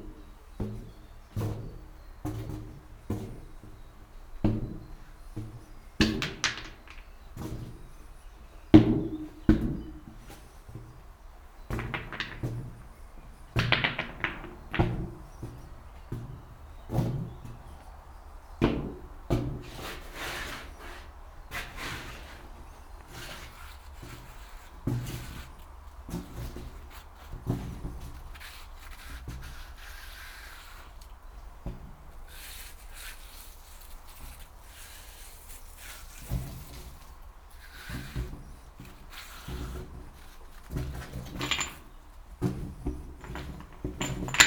small tunnel under railroad with strange resonance, 35 meters long, 1,5 meter wide, at one end 3 meters high, other end 1.6 meters high. Slowly walking through the tunnel making noise. Recorded with binaural microphones (OKM).